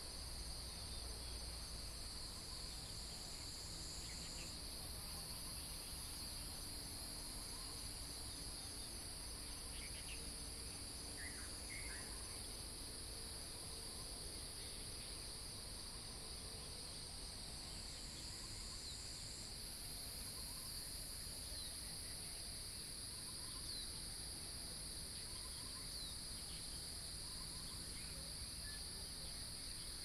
青蛙ㄚ 婆的家, 桃米里, Puli Township - Bird calls
In the morning, Bird calls, Cicadas cry